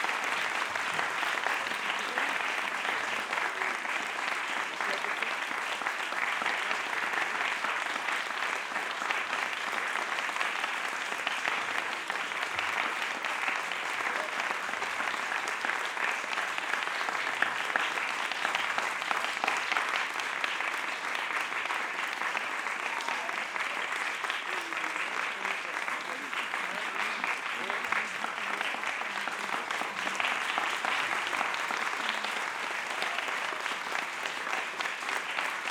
Rue des Franche Amitié, Saint-Étienne, France - "Le Solar" - La Comète - St-Etienne
"Le Solar" - La Comète - St-Etienne
Orchestre du Conservatoire Régional de St-Etienne
Extrait du concert.
ZOOM F3 + AudioTechnica BP 4025